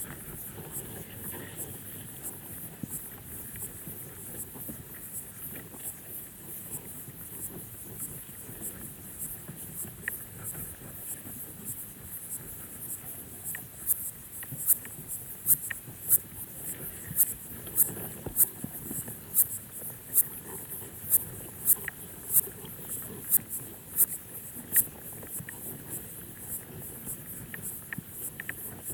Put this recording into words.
Hydrophone listening in lake Zarasas.